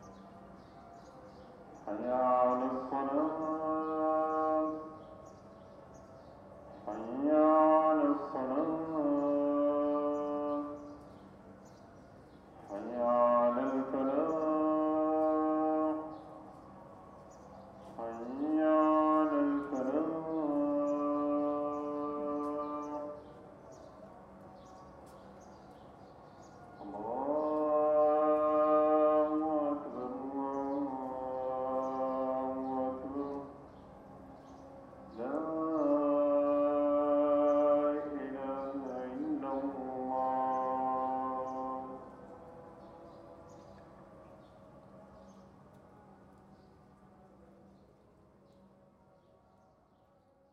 Muharraq, Bahreïn - Appel à la prière 11h36
Muharraq - Jamsheer House - Barhain
Appel à la prière de 11h36
محافظة المحرق, البحرين, 2 June